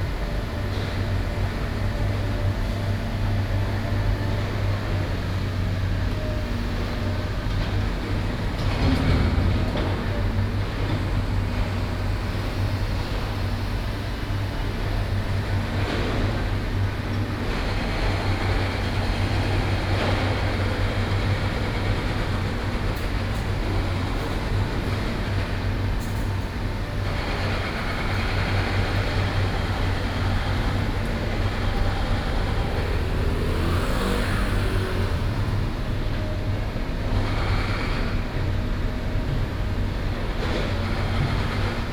中正區光復里, Taipei City - Construction noise
In the alley, Sound from construction site
Sony PCM D50+ Soundman OKM II
9 July 2014, ~14:00